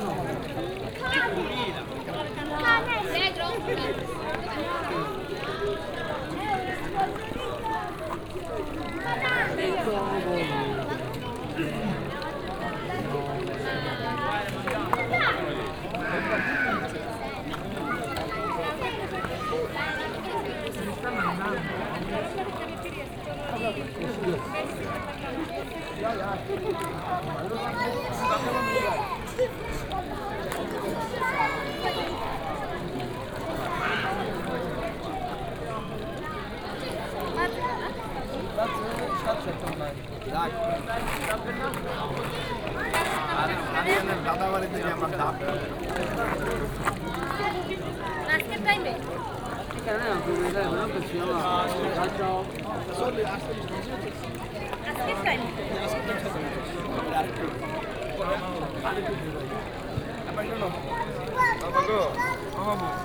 taormina, piazza duomo - fountain, evening
people sitting near the fountain at piazza duomo in the evening.
Taormina ME, Italy, 25 October 2009, 19:50